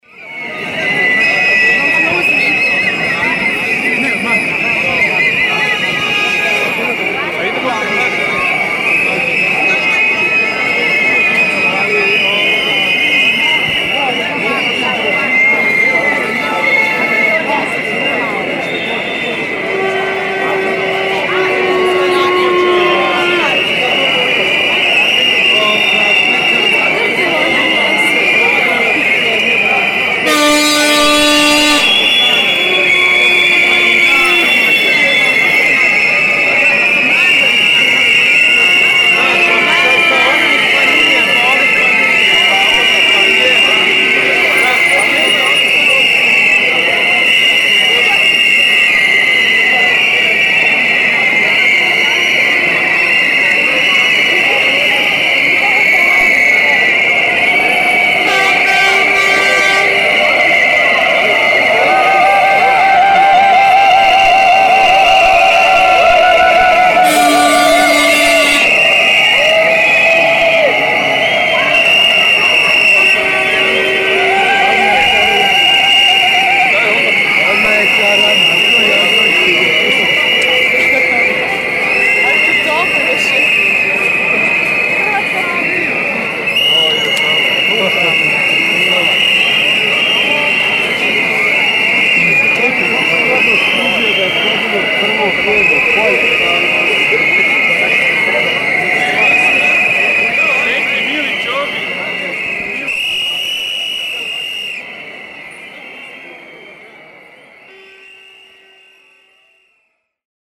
Protests in Zagreb, 3 March (4) - against the corrupted neoliberal system
marching towards the crucial locations of power: the National bank, the headquaters of the ruling conservative party, national television, newspapers, police, powerfull corporations...
City of Zagreb, Croatia, 3 March 2011